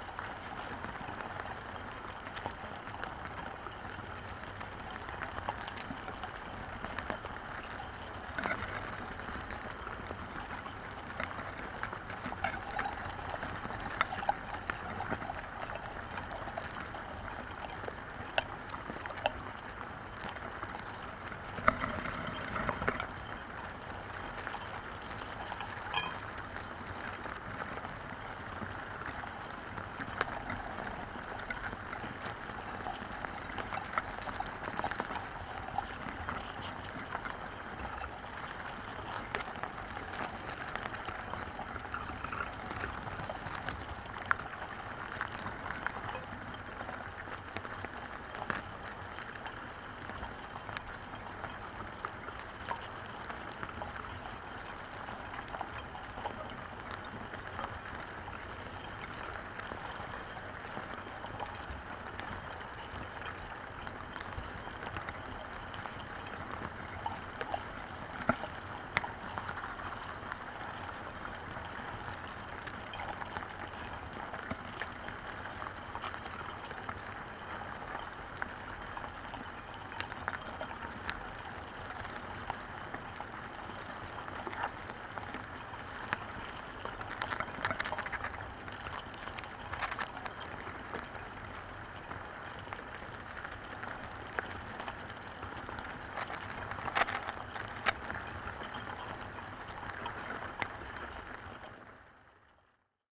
contact mics on ant mound in Estonia